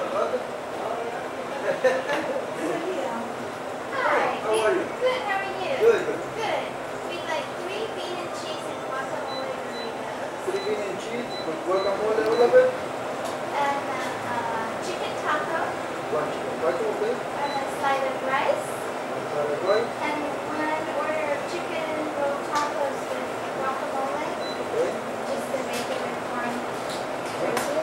Encinitas, CA, USA - Juanita's inner noises
Using my Olympus LS-10 I captured the sounds from inside this small restaurant. I was on holiday and ended up eating here often because of their amazing Burrito's. Also the owners were very happy and friendly which made the place feel homely.